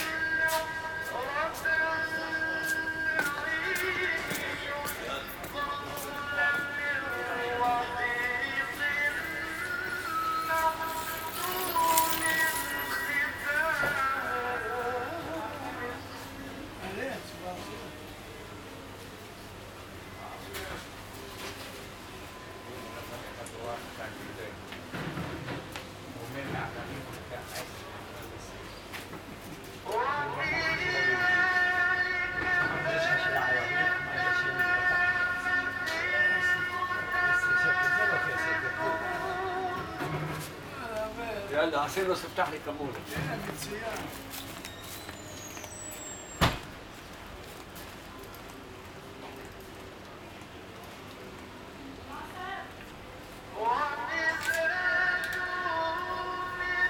Binyamin mi-Tudela St, Acre, Israel - Market in the morning, Acre
Alley, Market, Muazin, Good-morning, Arabic, Hebrew
May 2018